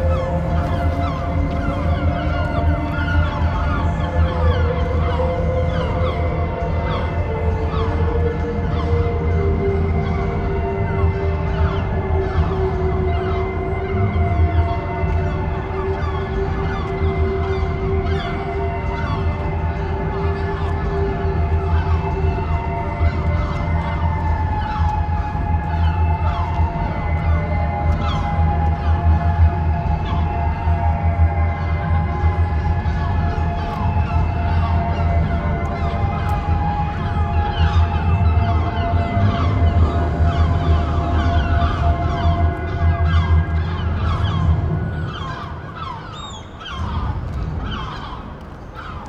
Tallinn, Hobujaama - concert and birds
tallinn, hobujaama, excited birds circle over an old building where norwegian composer maja ratkje is giving a high volume concert